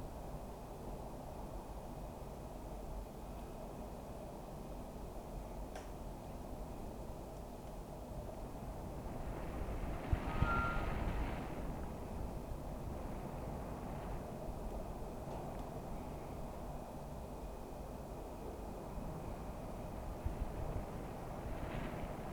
wind whistling through a small slit in a slightly bent balcony window. around 1:45 mark wind intensifies and it sounds as if an ensemble of harmonicas were playing in unison. (roland r-07)